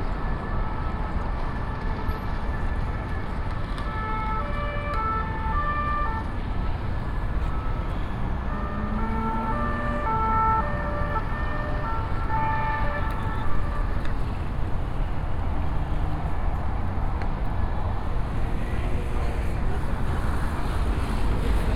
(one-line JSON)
{"title": "Place de la Concorde, Paris, France - (382) Traffic at Champs-Élysées", "date": "2018-09-27 16:53:00", "description": "Recording from Place de la Concorde - heavy traffic horns and sirens at Champs-Élysées.\nrecorded with Soundman OKM + Sony D100\nsound posted by Katarzyna Trzeciak", "latitude": "48.87", "longitude": "2.32", "altitude": "33", "timezone": "Europe/Paris"}